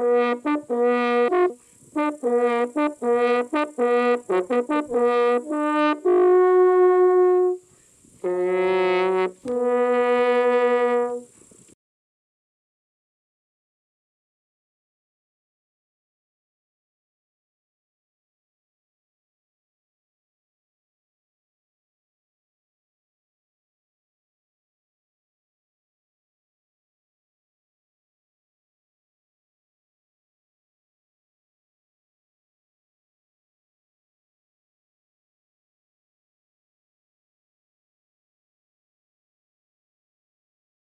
Horní Orlice, Červená Voda, Česká republika - hunters are practising hunting calls
10 September 2013, 18:00